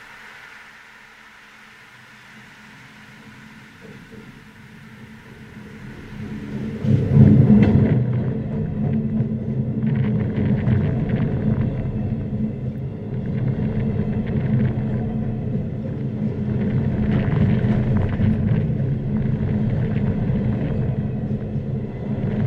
Trieste. Railway bridge.
First sound recording of a train passing by me (with a contact microphone)